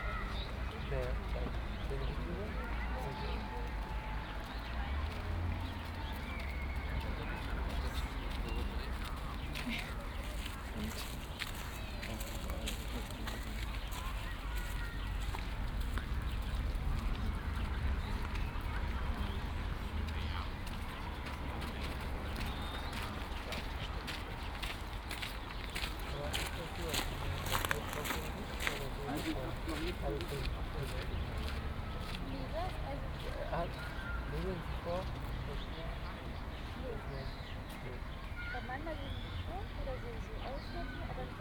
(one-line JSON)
{"title": "Lohmühlenstr. - Ufer / river bank", "date": "2010-06-27 20:00:00", "description": "lohmühlenstr., riverside, landwehrkanal and teltowkanal meet here. former berlin wall area. warm summer sunday evening, steps, people talking. (binaural recording, use headphones)", "latitude": "52.49", "longitude": "13.44", "altitude": "35", "timezone": "Europe/Berlin"}